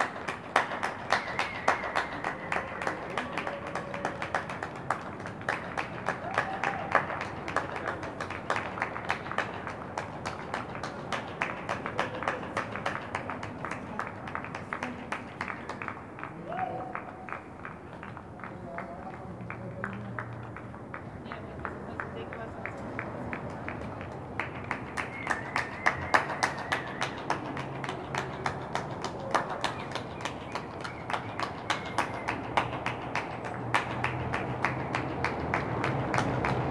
Reuterstrasse: Balcony Recordings of Public Actions - Public Clapping Day 04
Same procedure as every day.
Deutschland, 24 March 2020, 19:00